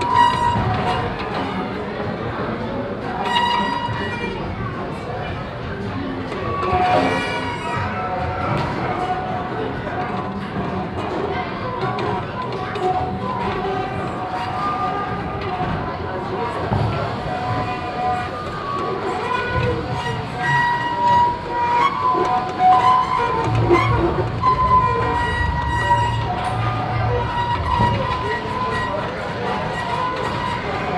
Møhlenpris, Bergen, Norwegen - Bergen - science center Vilvite, centrifugal wheel
Inside the Bergen science center at a handheld centrifugal wheel. The squeaky, singing sound of the turning wheel and moving stand platform inside the overall crowdy atmosphere.
international sound scapes - topographic field recordings and social ambiences
Bergen, Norway, 17 July 2012, ~16:00